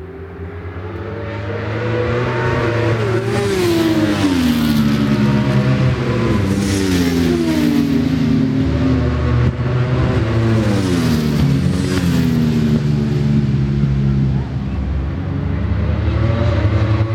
Brands Hatch GP Circuit, West Kingsdown, Longfield, UK - WSB 2003 ... superbike qualifying ...
world superbikes 2003 ... superbike qualifying ... one point stereo mic to minidisk ... time approx ...
26 July, 14:30